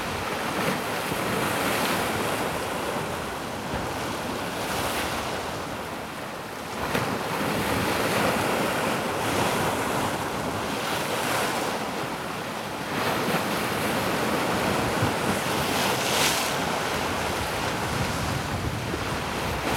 Spain, Cadaqués, Punta des Baluard - Punta des Baluard
You decided to go for a walk along the seaside via Riba Nemesi LLorens. At Punta des Baluard, it is a tight hairpin bend and there is no pavement. So here is your choice: either you get splashed by the sea that is hitting and copiously washing the street, or crashed by the many vehicles - cars and above all scooters and quads - that noisily run through the town.